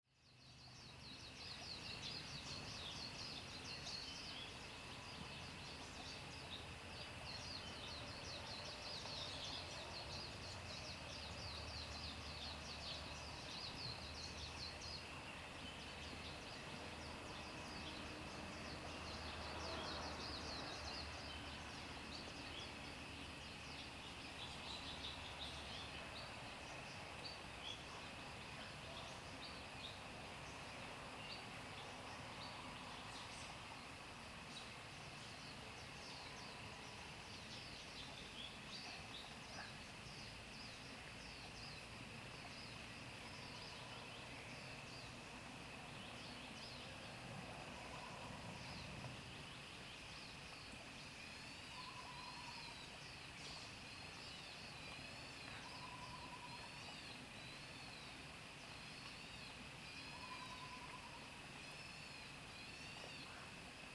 TaoMi Li., 桃米生態村 Puli Township - Early morning
Early morning, Bird calls, Frogs sound, Sound of insects
Zoom H2n MS+XY